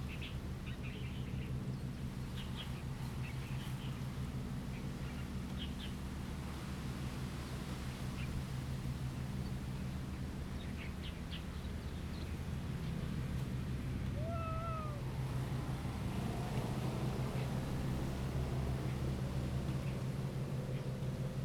Birds singing, The sound of a distant fishing boat, Wind and Trees
Zoom H2n MS+XY